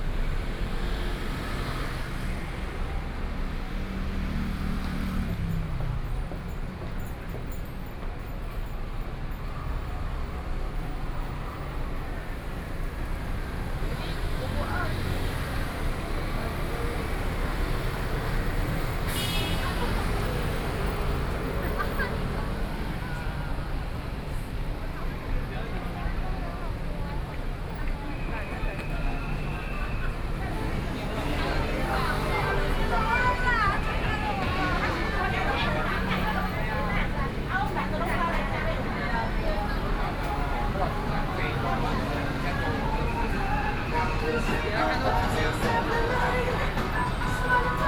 Walking on the road, Follow the footsteps, Traffic Sound, Various shops voices